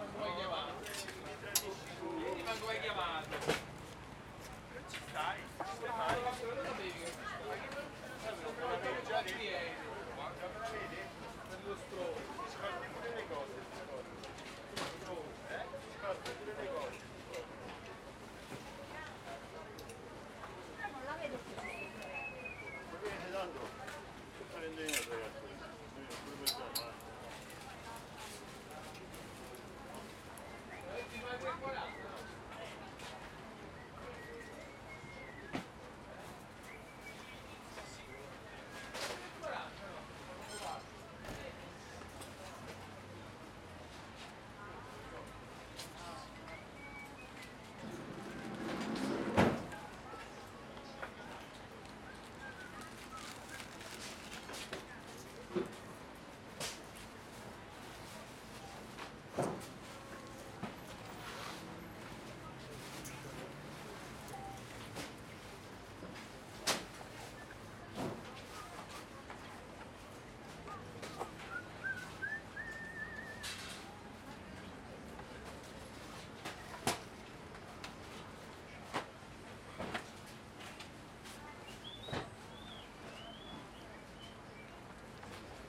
L'Aquila, Piazza d'Armi - 2017-05-22 02-Mercato pzza d'Armi
Area adibita a mercato giornaliero dopo il terremoto del 2009. In precedenza era un’area militare adibita ad esercitazioni per automezzi militari.